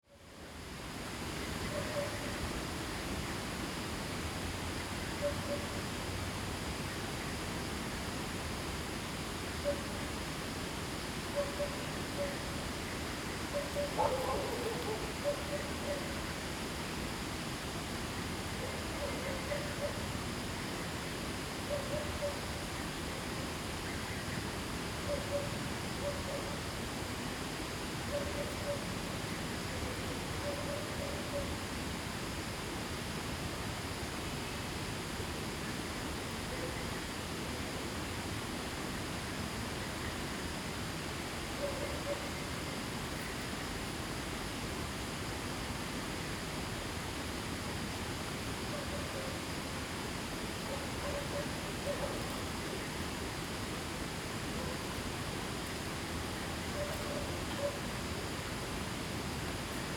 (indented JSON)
{
  "title": "TaoMi River, 埔里鎮桃米里 - Standing stream side",
  "date": "2015-08-11 18:02:00",
  "description": "Birds singing, Dogs barking, Brook, A small village in the evening\nZoom H2n MS+XY",
  "latitude": "23.94",
  "longitude": "120.93",
  "altitude": "473",
  "timezone": "Asia/Taipei"
}